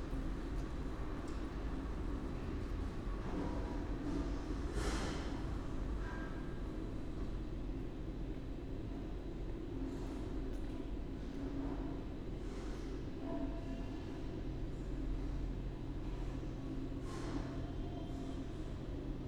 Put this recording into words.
Esch-sur-Alzette, main station, Wednesday morning, entrance hall ambience, (Sony PCM D50, Primo EM172)